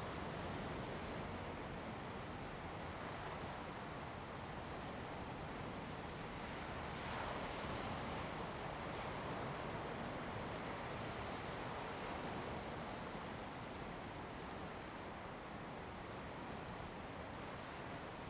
龜灣, Lüdao Township - On the coast
On the coast, Sound of the waves
Zoom H2n MS +XY